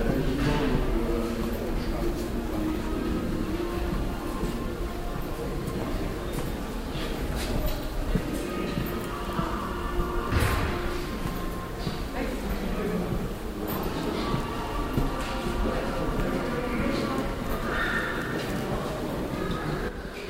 hilden, westring, möbelzentrum - hilden, westring, moebelzentrum
aufnahme in einem moebelzentrum abends, listen to the music
recording in a shopping centre for furniture in the evening. Steps and talks of passing bye shoppers bathed in shopping muzak by Elvis. "And his mama cried"
project: :resonanzen - neanderland soundmap nrw: social ambiences/ listen to the people - in & outdoor nearfield recordings